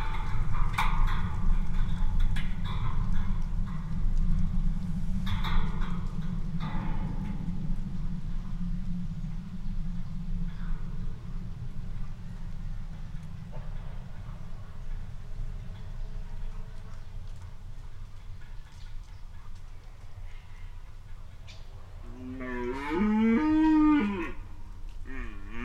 Bunny Valley, Lithuania, deer fence
Small omnis and contact mic on a fence quarding deers
October 2018